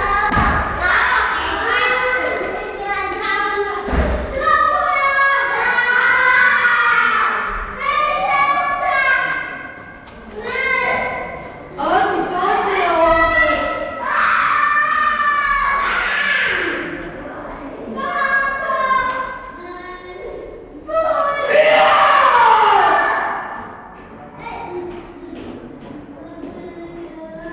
{"title": "Borbeck - Mitte, Essen, Deutschland - essen, traugott weise school, foyer", "date": "2014-05-14 13:00:00", "description": "In der Traugott Weise Schule einer Förderschule mit dem Schwerpunkt geistige Entwicklung - im Eingangsbereich. Der Klang der Schritte und Stimmen von Lehrern und Kindern.\nInside the Traugott Weise school at the foyer. The sound of voices and steps of pupils and teachers.\nProjekt - Stadtklang//: Hörorte - topographic field recordings and social ambiences", "latitude": "51.47", "longitude": "6.95", "altitude": "65", "timezone": "Europe/Berlin"}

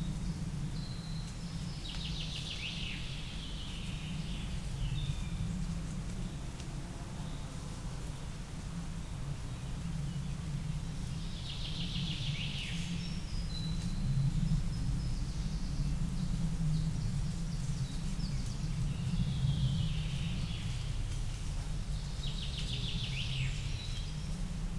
{"title": "Kneške Ravne, Most na Soči, Slovenia - In the wood", "date": "2021-06-10 19:49:00", "description": "Birds, mouses walking.\nMixPre2 with Lom Uši Pro, AB 50cm.", "latitude": "46.22", "longitude": "13.84", "altitude": "691", "timezone": "Europe/Ljubljana"}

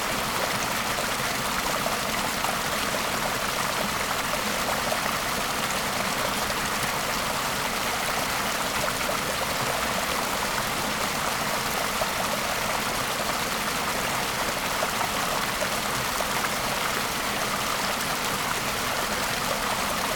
{"title": "Merthyr Tydfil, UK - Cooling stream after a hot day of hiking", "date": "2020-08-07 13:19:00", "description": "Recorded with LOM Mikro USI's and Sony PCM-A10.", "latitude": "51.85", "longitude": "-3.37", "altitude": "486", "timezone": "Europe/London"}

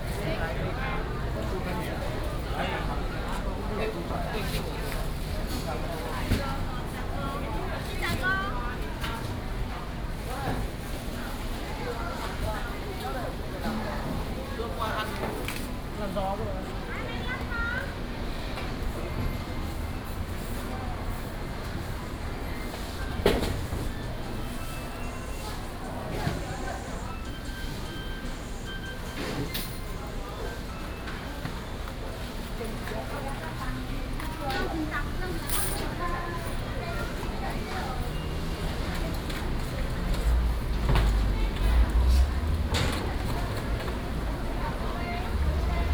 {"title": "潭秀好康黃昏市場, Tanzi Dist., Taichung City - Walking in the dusk market", "date": "2017-10-09 18:04:00", "description": "Walking in the dusk market, vendors peddling, Traffic sound, Binaural recordings, Sony PCM D100+ Soundman OKM II", "latitude": "24.22", "longitude": "120.70", "altitude": "176", "timezone": "Asia/Taipei"}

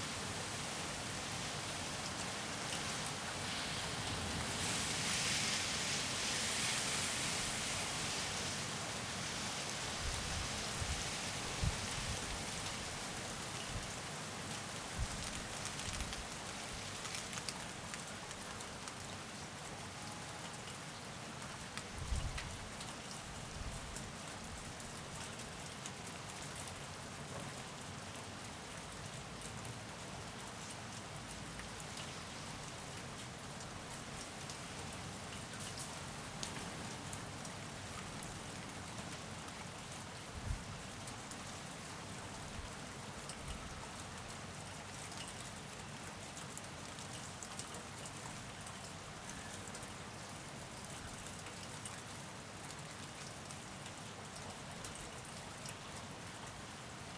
{"title": "Köln, Maastrichter Str., backyard balcony - short thunderstorm in winter", "date": "2011-12-29 22:20:00", "description": "a sudden and short thunderstorm on a winter evening\ntech note: Olympus LS5, builtin mics", "latitude": "50.94", "longitude": "6.93", "altitude": "57", "timezone": "Europe/Berlin"}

